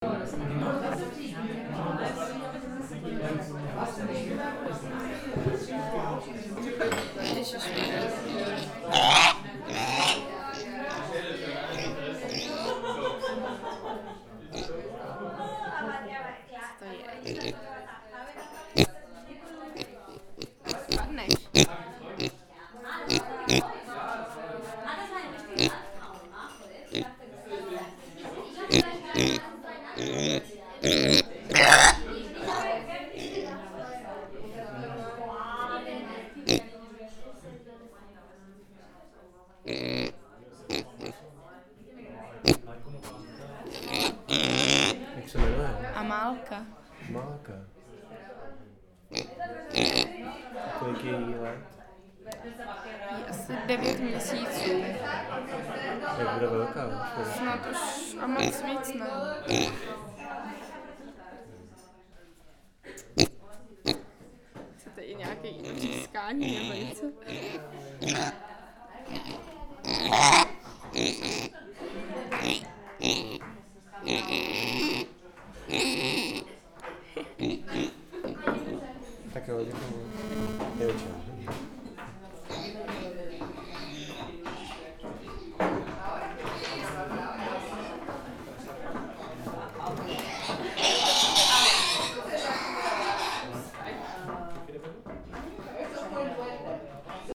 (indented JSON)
{
  "title": "Amálka in Café Trafika",
  "date": "2011-01-29 13:43:00",
  "description": "I was hiding in cafe in Vinohrady before freezing. Suddenly Ive heard strange sounds and Ive discovered Amálka.",
  "latitude": "50.08",
  "longitude": "14.44",
  "altitude": "275",
  "timezone": "Europe/Prague"
}